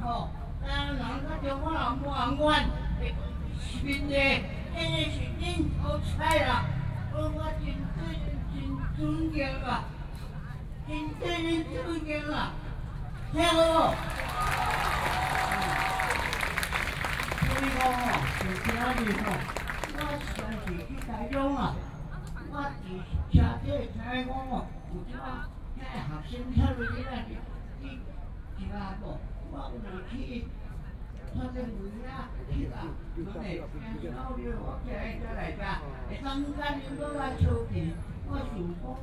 Taipei, Taiwan - Speech
Long-term push for Taiwan independence, The current political historians have ninety-year-old
Binaural recordings, Sony PCM D50 + Soundman OKM II
Zhongzheng District, Taipei City, Taiwan, 19 April 2014, ~22:00